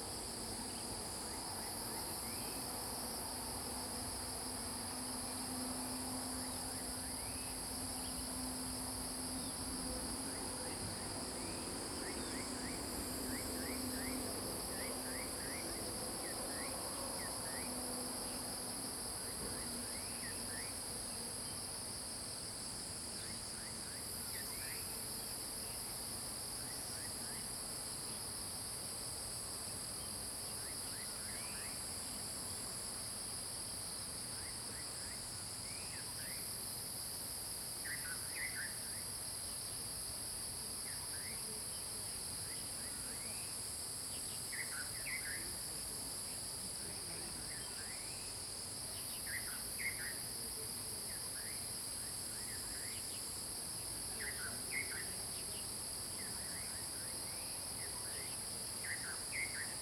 桃米里, Puli Township, Taiwan - Birds singing
Birds singing
Zoom H2n MS+XY